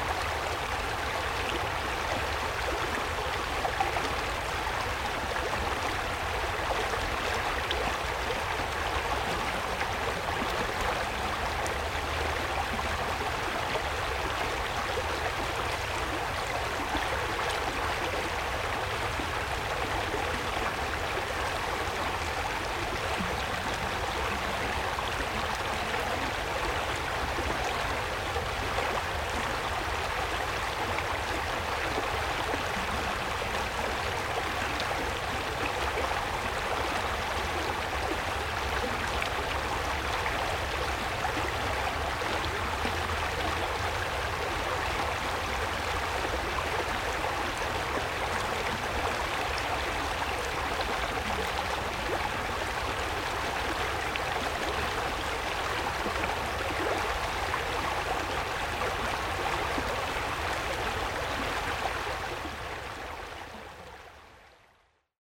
hinter prex - dreilaendereck
Produktion: Deutschlandradio Kultur/Norddeutscher Rundfunk 2009